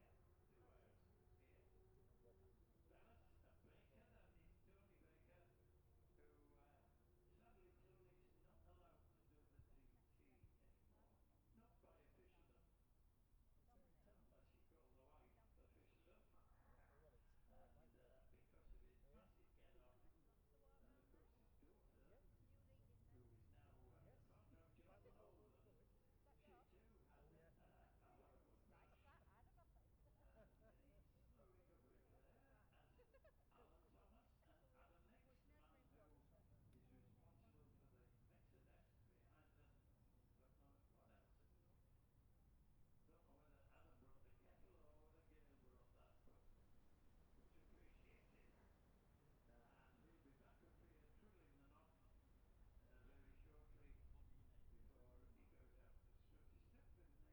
Jacksons Ln, Scarborough, UK - olivers mount road racing 2021 ...
bob smith spring cup ... olympus LS 14 integral mics ... running in some sort of sync with the other recordings ... from F2 sidecars to classic superbikes practices ... an extended ... time edited recording ...